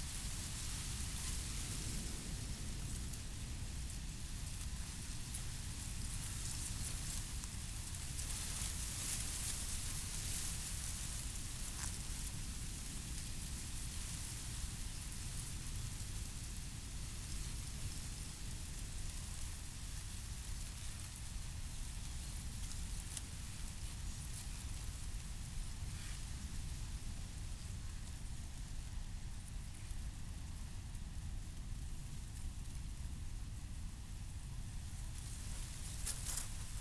river Oder floodplain, Kienitz / Letschin - wind in dry reed
river Oder floodplain, wind in dry reed
(Sony PCM D50, DPA4060)
Letschin, Germany